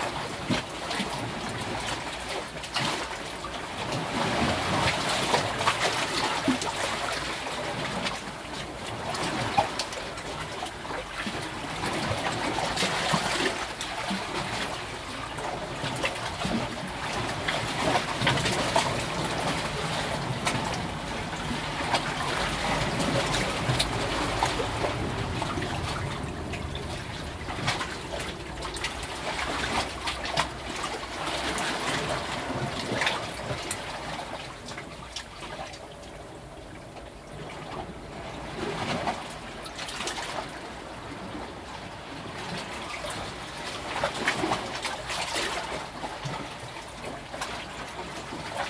Italia - dentro gli scogli
ho calato, con una cordicella, il mio Zoom H2N, settato su 2 canali surround, negli spazi vuoti tra uno scoglio e l'altro.